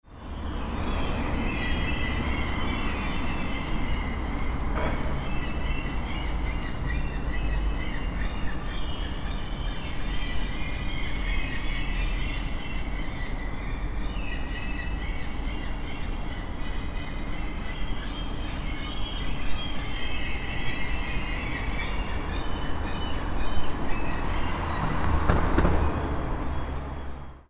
Stockholm, Crazy Seagulls
Crazy Seagulls echoing around the streets of Stockholm.